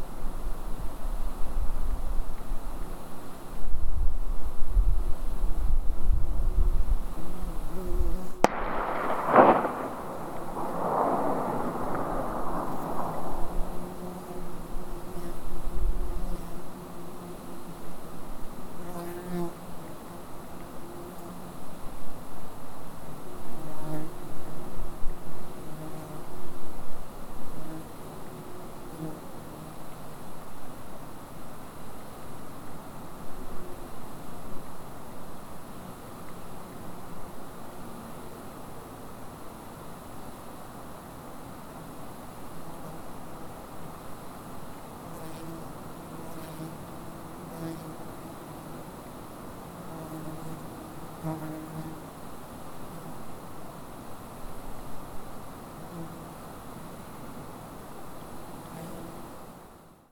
Tenas Creek Rd, Darrington, WA, USA - Boulder Lake
Report of a Ruger MKII across alpine lake, call of an eagle, bees.
22 July, 17:37